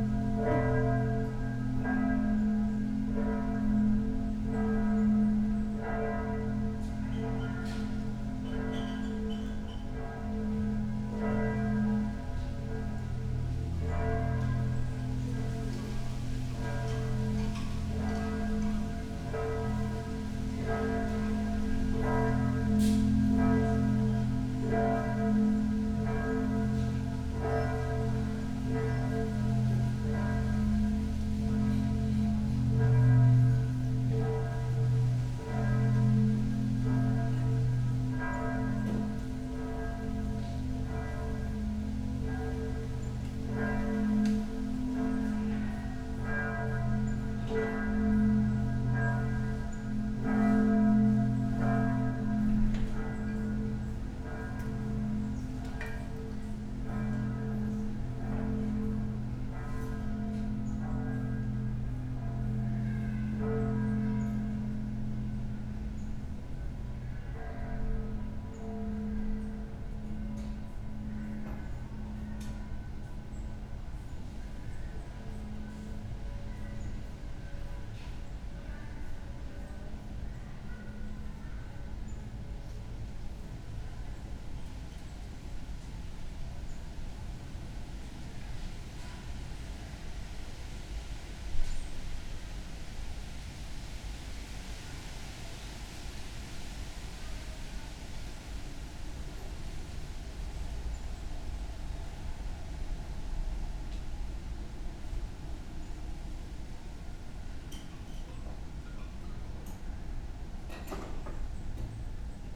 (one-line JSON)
{"title": "Berlin Bürknerstr., backyard window - churchbells and ambience", "date": "2020-10-03 12:10:00", "description": "Tag der Deutschen Einheit (German unity day), churchbells in the yard, ambience\n(Sony PCM D50, Primo EM172)", "latitude": "52.49", "longitude": "13.42", "altitude": "45", "timezone": "Europe/Berlin"}